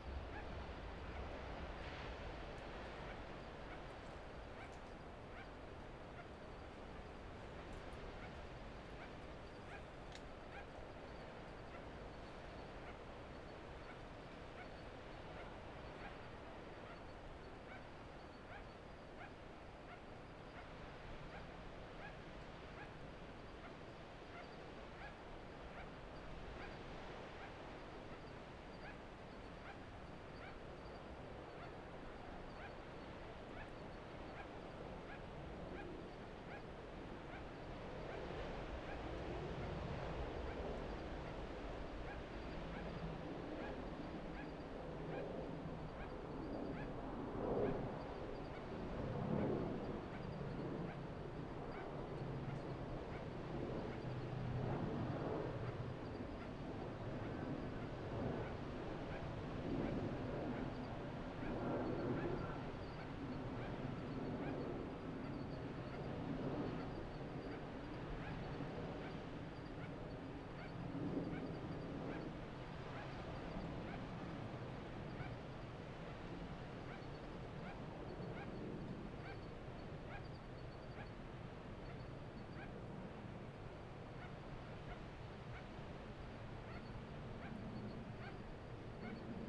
Royal National Park, NSW, Australia - Sugar glider and planes
Sugar glider (Petaurus breviceps) calling at night with the wind and the waves and the planes.
Recorded with an AT BP4025 into a Tascam DR-680.